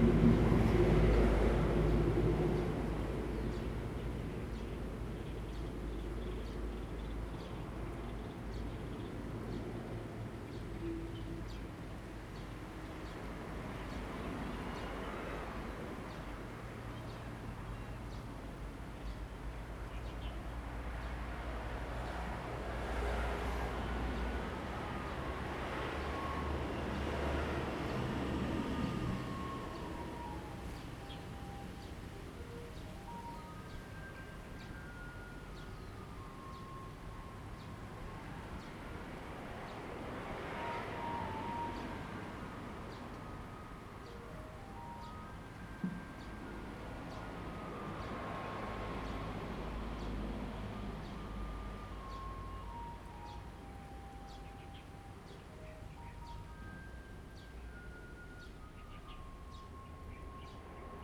大武漁港, Dawu Township - In the fishing port
In the fishing port, Traffic Sound, Birdsong, The weather is very hot
Zoom H2n MS +XY